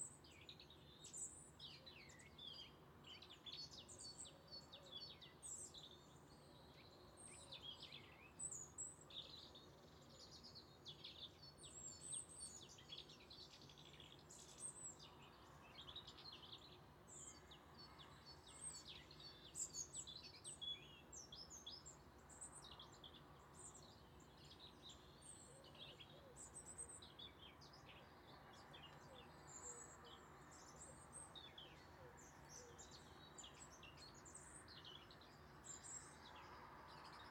Seigy, France - Countryside in winter
Seigy, France, Winter atmosphere winter, few birds, car passes away
by F Fayard - PostProdChahut
Sound Device 633, MS Neuman KM 140-KM120